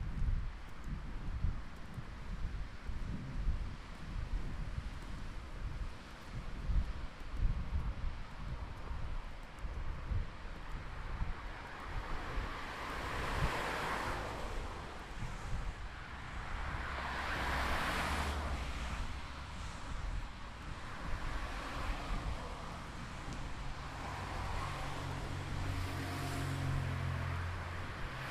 Birds vs. morning traffic
Spring is coming! Going to the tram every morning I noticed that a) it is already becoming bright at 7:30 b) that the birds are singing in the morning and evening. In the morning though, they have to try hard to predominate the noise of traffic.
Cologne, Germany, 1 March 2012, ~08:00